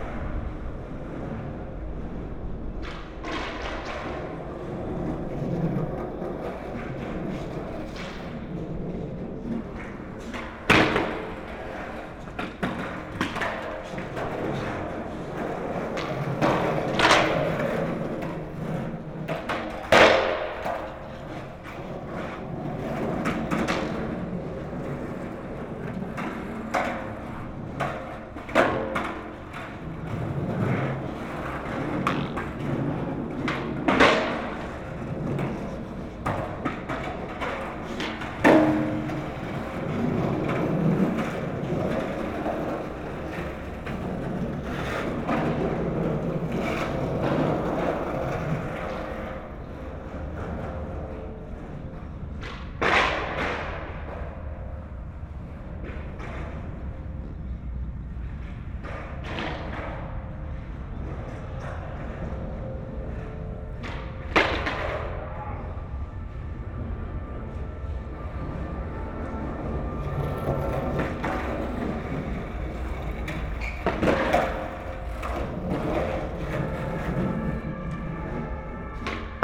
Köln, Deutz - skaters
skaters practising alongside building, creating interesting revererations between the concrete ceiling and ground
(Olympus LS5, Primo EM172 binaural)
Cologne, Germany, 17 May 2012, 17:10